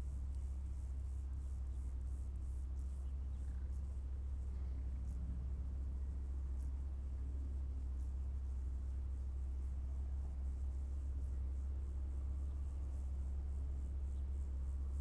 Berlin Wall of Sound, tractor at Rudow border 080909